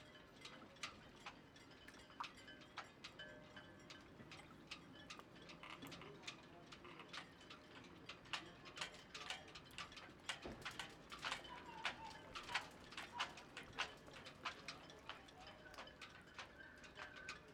Triest, Italien - Trieste - Sailing port
Loose halyards hitting masts in some pretty strong wind blasts at a sailing port in Trieste, recorded on the steps in the quay wall.
[Sony PCM-D100 with Beyerdynamic MCE 82]
11 September, ~11:00